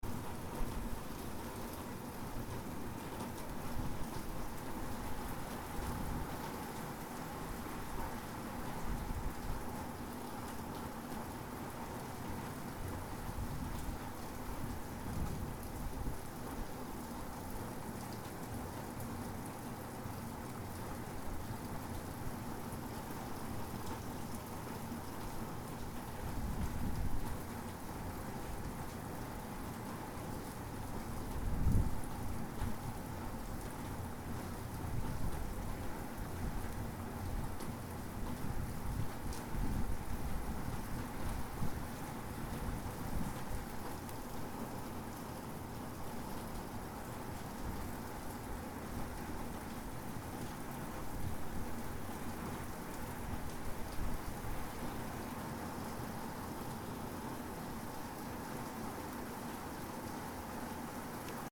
{"title": "Via degli Olmi, Forte dei Marmi LU, Italia - Rain in the back yard at the Traffic Lights House", "date": "2018-03-15 21:00:00", "description": "Ponte di Tavole, Forte dei Marmi, a downpour at night.", "latitude": "43.97", "longitude": "10.19", "altitude": "10", "timezone": "Europe/Rome"}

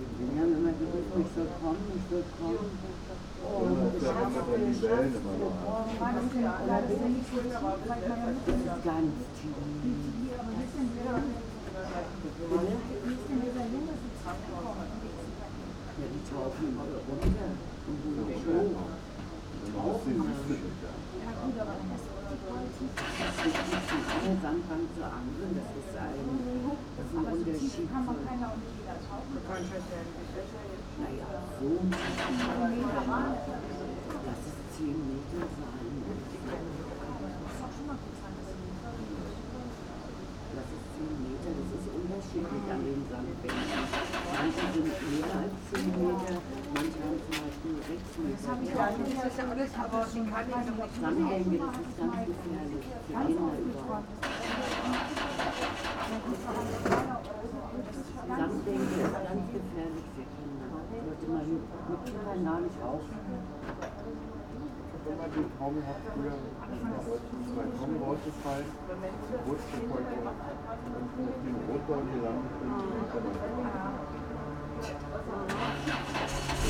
{"title": "Berlin, Stralau - residential area, cafe ambience", "date": "2010-07-25 11:50:00", "description": "Berlin, Stralau, residential area, almost empty, sunday noon, tristesse, little cafe ambience", "latitude": "52.50", "longitude": "13.47", "altitude": "36", "timezone": "Europe/Berlin"}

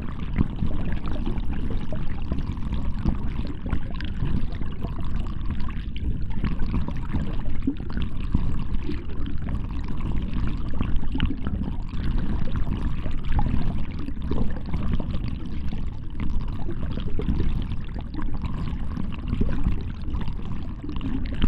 {"title": "Mont-Saint-Guibert, Belgique - The river Orne", "date": "2016-04-10 16:00:00", "description": "Recording of the river Orne, in a pastoral scenery.\nRecorded underwater with a DIY hydrophone.", "latitude": "50.63", "longitude": "4.63", "altitude": "99", "timezone": "Europe/Brussels"}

